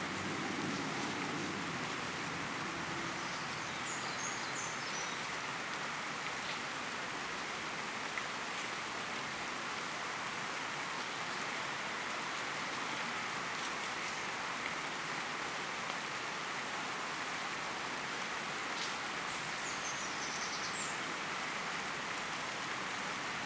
10 Tierney Road - 5am Recording of Thunder, Rain and General Ambience.
Not the best recording (setup in a hurry, to record the thunder before it passed) using a shotgun microphone sticking out the window. It was the first microphone on hand and I was half asleep and as I said...in a hurry!
London, UK, 31 July 2018, 05:00